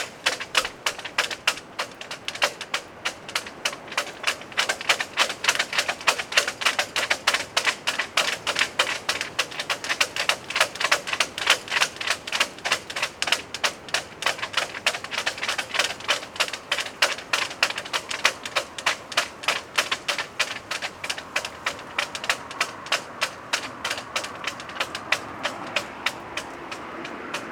3 mats au vent
Parc de Penn Avel
Rythmique de cordage
Tempête à venir...
Croisic, France, 13 March 2008, 16:35